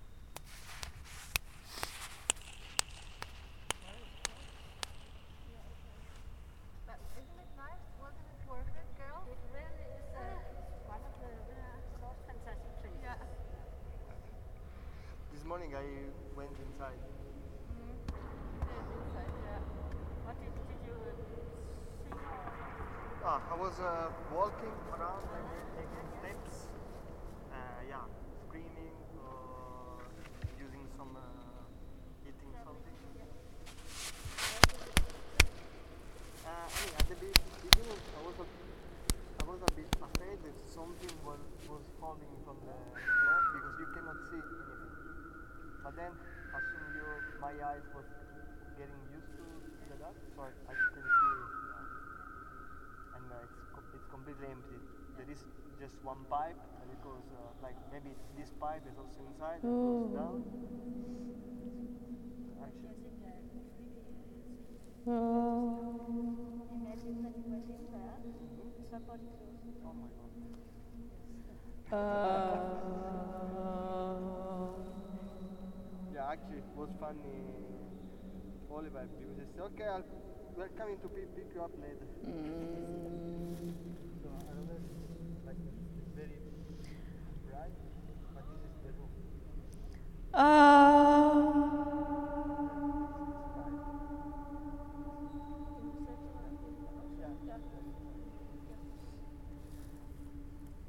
Havnevej, Struer, Danmark - at the door of the oil tank
At the door of the oil tank, recorder head towards the inside of the tank, trying little impacts, vocalises etc. hear as well a conversation and speaking voices, laughters just outside of the door
Region Midtjylland, Danmark